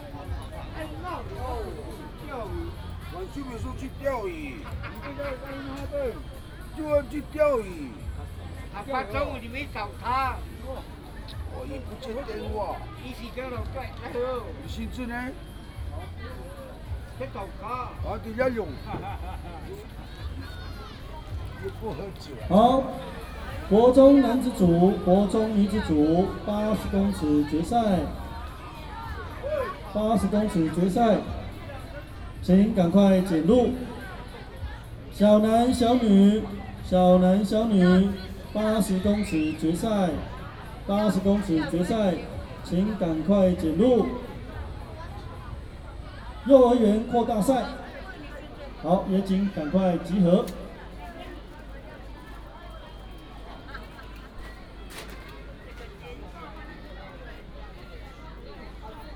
金峰鄉正興介達國小, Taitung County - Walking around the school
School and community residents sports competition, Cheer cheers